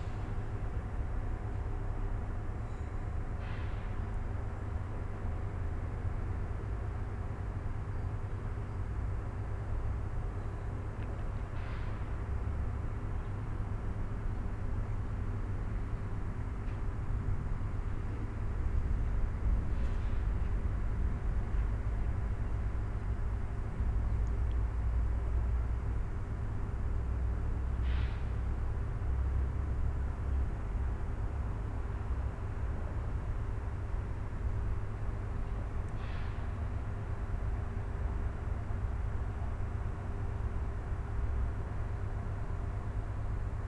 ambience heard on the debris of former Huta Laura (Laurahütte) ironworks plant, in front of one of the remaining buildings.
(Sony PCM D50, DPA4060)